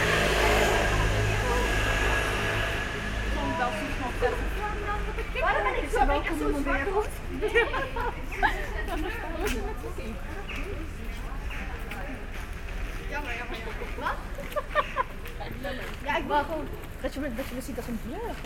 Paviljoensgracht Den Haag, Netherlands - Evening traffic
Cars, bikes, voices, birds moving along the "gracht" Binaural Soundman mics.